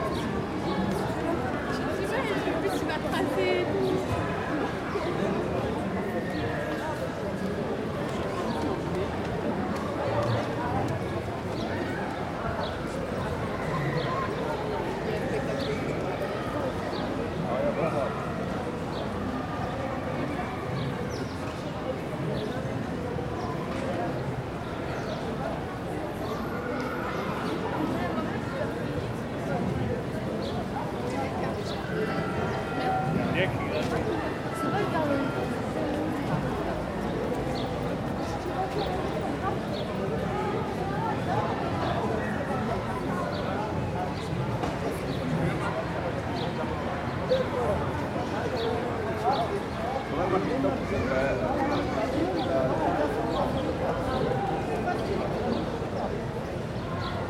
Ambience street
Captation : ZOOMH4n